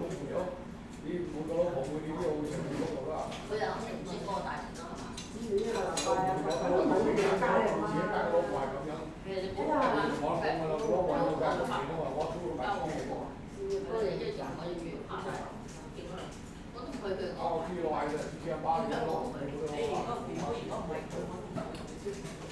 Hester St, New York, NY, USA - A Restaurant in Chinatown
Chinese Restaurant Ambience in Chinatown/Little Italy.
Sounds of restaurant crew cutting vegetables and clients chatting.
Zoom H6